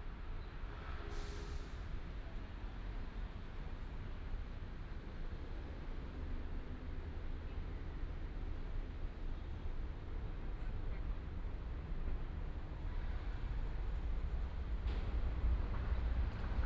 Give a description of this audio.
Next to the tracks, The train runs through